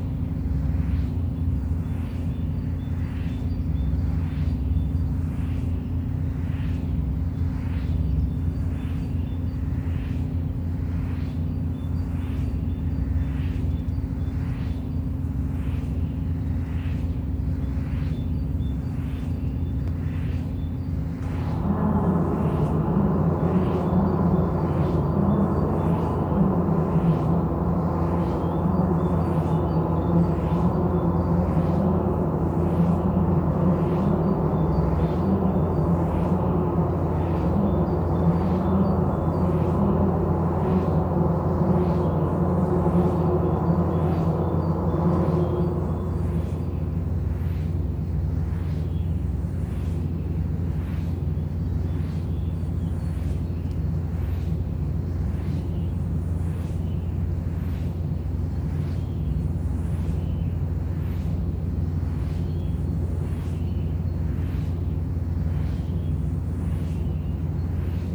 near Allrath, Germany - Windgenrator, sound on metal door into the tower
Wind generators are a green face of energy production in this area otherwise dominated by huge opencast brown coal mines and associated power stations. All are owned by the company RWE AG, one of the big five European energy companies. Each wind generator has different sound.